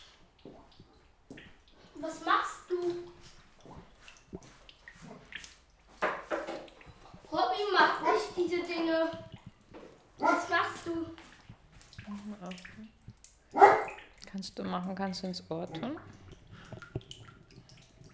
Hoetmar, Germany - Sounds of a new home...